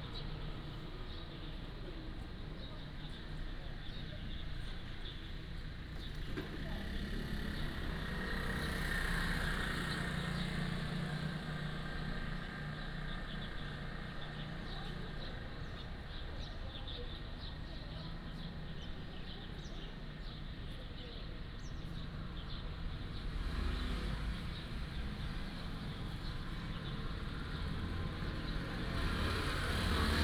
蔬菜公園, Nangan Township - Morning in the park
Morning in the park, Birds singing, Traffic Sound, Vegetables are grown throughout the park
連江縣, 福建省 (Fujian), Mainland - Taiwan Border, 15 October 2014, 06:36